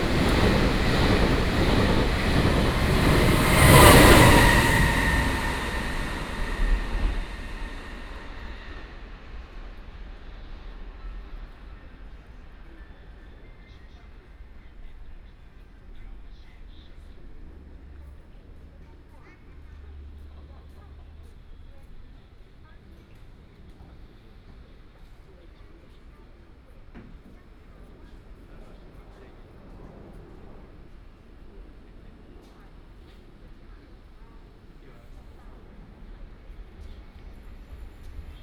Train traveling through, At the station platform